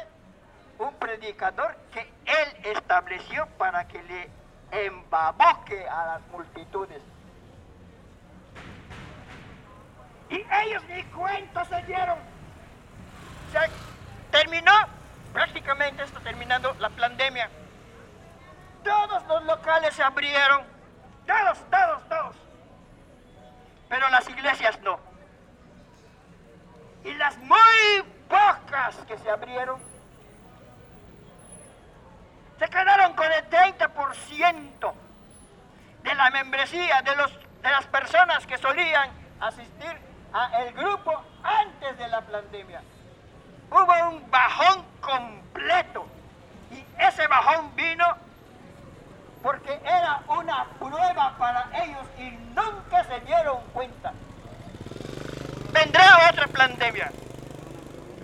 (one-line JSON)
{"title": "por calle 65 y, C., Centro, Mérida, Yuc., Mexique - Merida - le prédicateur", "date": "2021-10-24 11:00:00", "description": "Merida - Mexique\nLe prédicateur", "latitude": "20.96", "longitude": "-89.62", "altitude": "13", "timezone": "America/Merida"}